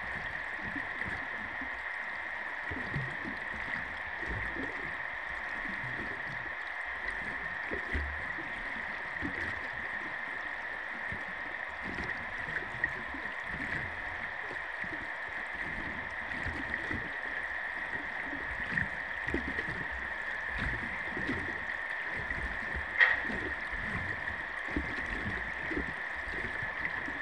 Ústí nad Labem, Česká republika - Pod vodou Milady
Hydrofon ze břehu zatopeného hnědouhelného lomu Chabařovice, dnes jezero Milada
Ústí nad Labem-město, Czech Republic, August 2016